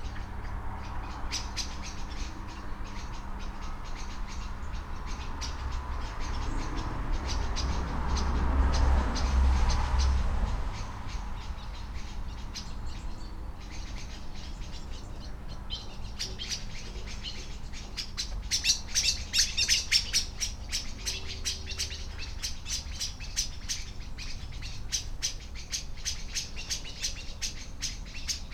Post Box, Malton, UK - Blackbird dusk ...
Blackbird dusk ... SASS ... bird calls from ... house sparrow ... robin ... tawny owl ... starling ... plenty of traffic noise ...